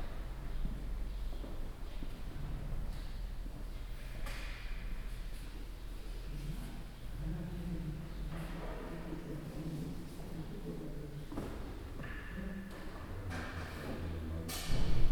St Josef, Hamm, Germany - last piece from the organ noon lock-down
inside a few people dispersed across empty benches, last piece from the organ, the organist packs up and leaves… noon, lock-down...
12 April, 11:55